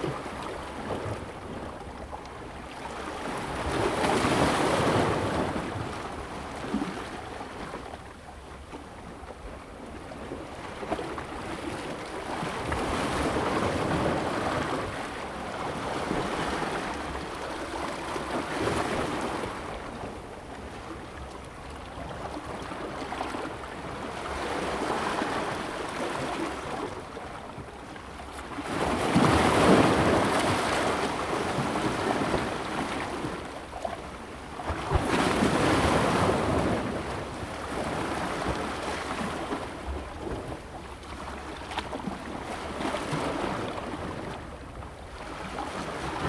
漂流木公園, Xinwu Dist., Taoyuan City - High tide time and Wave block
at the seaside, Waves, High tide time, Wave block
Zoom H2n MS+XY